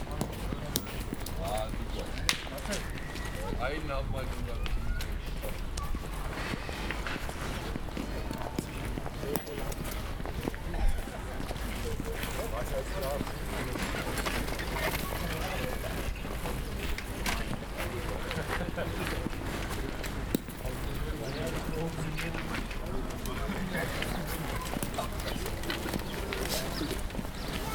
Kreuzberg, Berlin, Deutschland - boule gate
Berlin, Germany, 9 March 2016